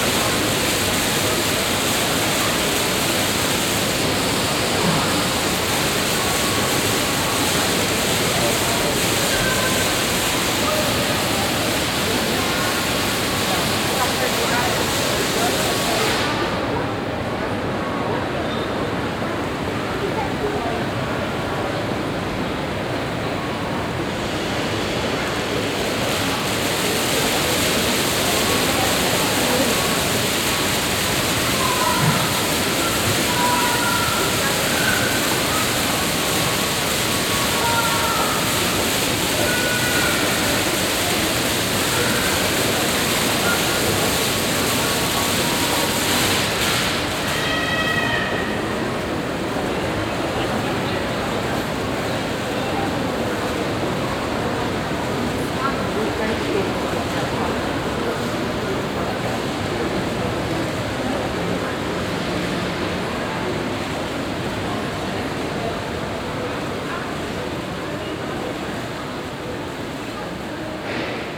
Stadtkern, Essen, Deutschland - essen, shopping mall, fountain
Inside the shopping mall Limbecker Platz. The sound of people, the rolling staircase and a fountain that is located in the centre of the architecture.
Im Einkaufszentrum Limbecker Platz. Der Klang von Menschen, Rolltreppen und einer Wasserfontäne aus einem Brunnen inmitten der Architektur.
Projekt - Stadtklang//: Hörorte - topographic field recordings and social ambiences
Essen, Germany, 4 April 2014